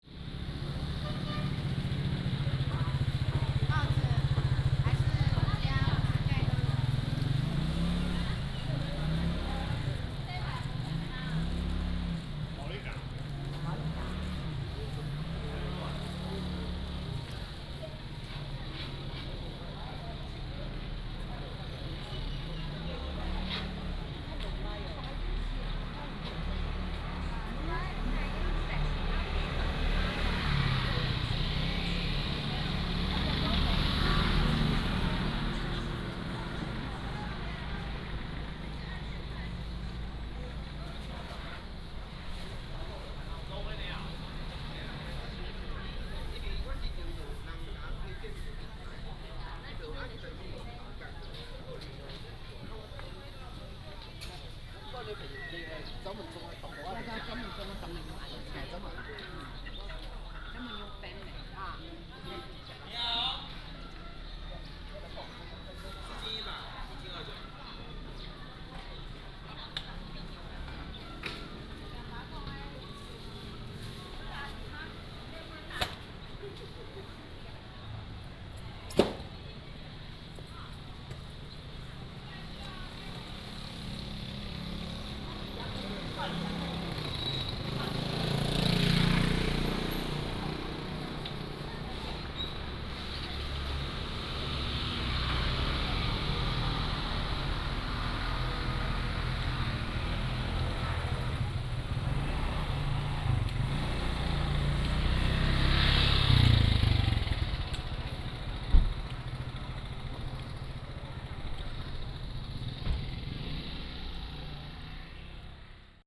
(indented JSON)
{
  "title": "Yongle Rd., Tongluo Township - Small town market",
  "date": "2017-02-16 10:04:00",
  "description": "Market selling sound, Small town market, Traffic sound",
  "latitude": "24.49",
  "longitude": "120.79",
  "altitude": "154",
  "timezone": "Asia/Taipei"
}